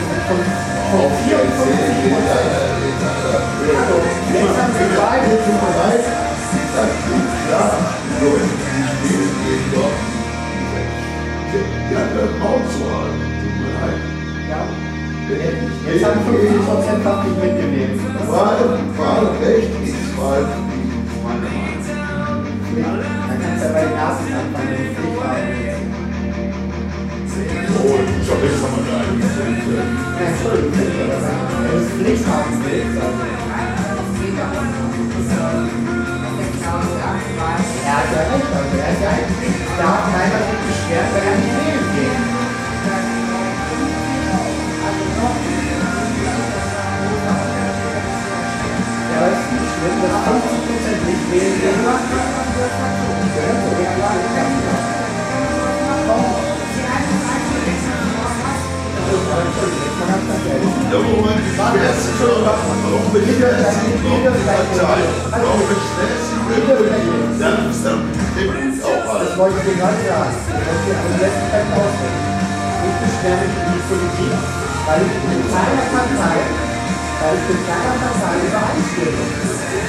Frohnhausen, Essen, Deutschland - anyway
anyway, berliner str. 82, 45145 essen
17 May 2010, 23:18